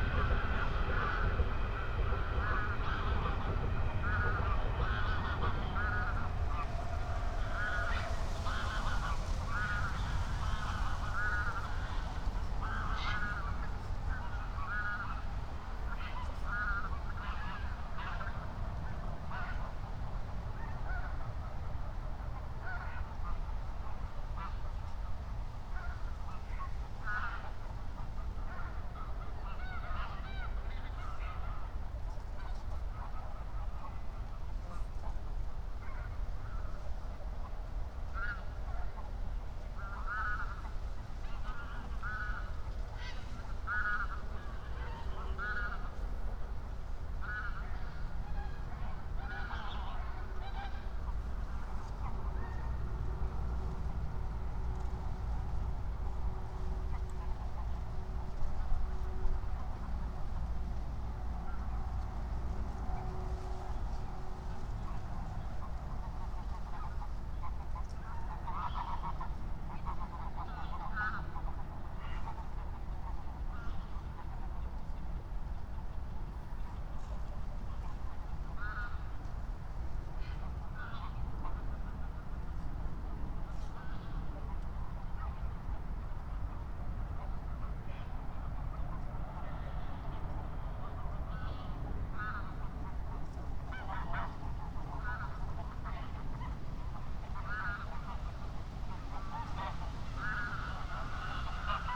23:39 Berlin, Buch, Moorlinse - pond, wetland ambience
Deutschland, October 2021